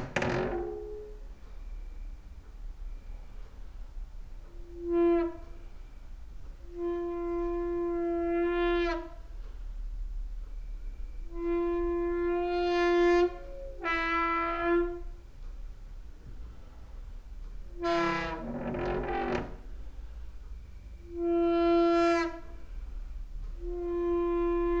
Mladinska, Maribor, Slovenia - late night creaky lullaby for cricket/25
quiet doors, and cricket, getting more distant and silent with nearby autumn